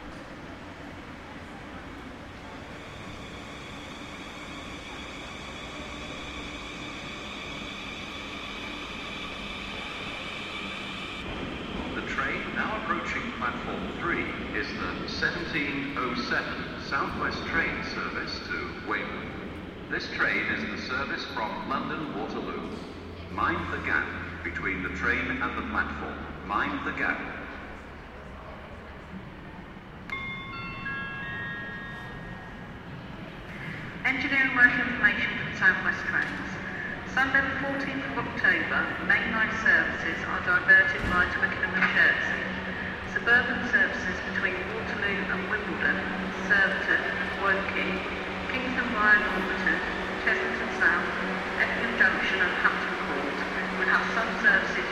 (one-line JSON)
{"title": "Bournemouth train station, UK - Bournemouth train station", "date": "2012-10-11 17:09:00", "latitude": "50.73", "longitude": "-1.86", "altitude": "36", "timezone": "Europe/London"}